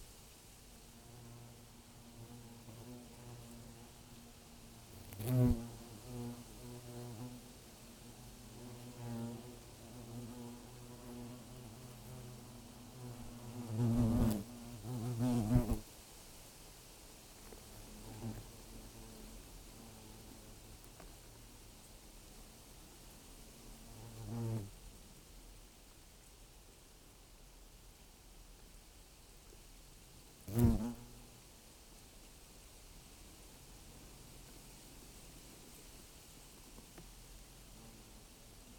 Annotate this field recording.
the nest of european hornets in a tree. recorded with sennheiser ambeo headset for I had no proper mics with me...